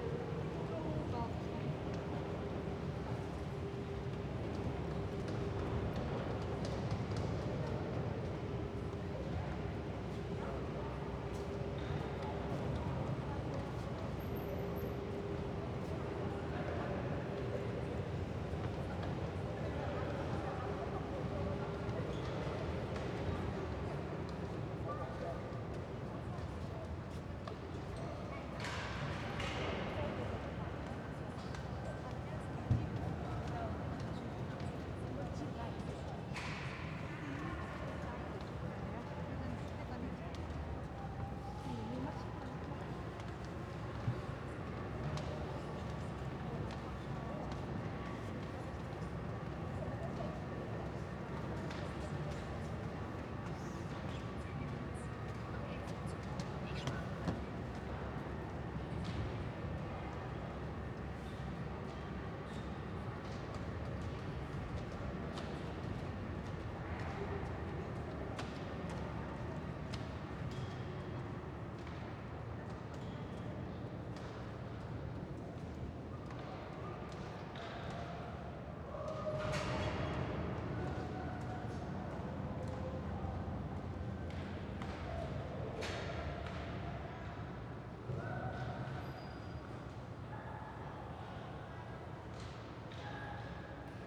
Bei den St. Pauli-Landungsbrücken, Hamburg, Deutschland - St. Pauli Elbe Tunnel, entrance area
Old Elbe Tunnel or St. Pauli Elbe Tunnel (German: Alter Elbtunnel colloquially or St. Pauli Elbtunnel officially) which opened in 1911, is a pedestrian and vehicle tunnel in Hamburg. The 426 m (1,398 ft) long tunnel was a technical sensation; 24 m (80 ft) beneath the surface, two 6 m (20 ft) diameter tubes connect central Hamburg with the docks and shipyards on the south side of the river Elbe. This was a big improvement for tens of thousands of workers in one of the busiest harbors in the world.
(Sony PCM D50, Primo EM272)